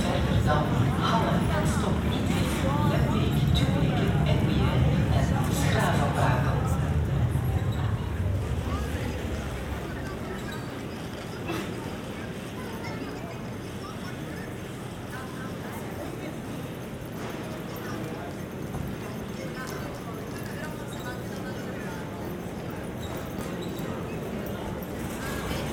Gare du Midi, Saint-Gilles, Belgique - Main hall ambience
People passing by, conversations, synthetic voices, trains passing above.
Tech Note : Ambeo Smart Headset binaural → iPhone, listen with headphones.